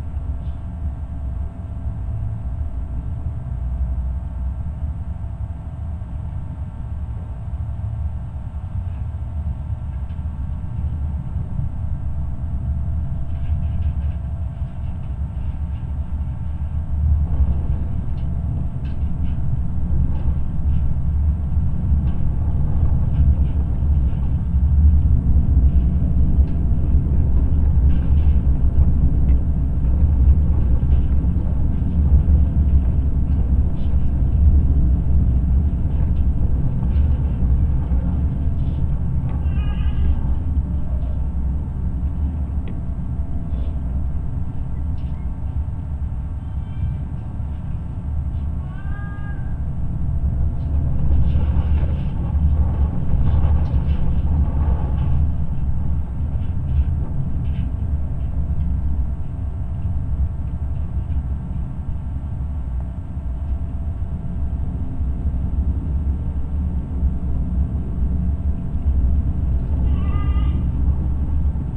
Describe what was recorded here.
Open air sculpture park in Antalge village. There is a large exposition of large metal sculptures and instaliations. Now you can visit and listen art. Multichanel recording using geophone, contact microphones and electromagnetic antenna Ether.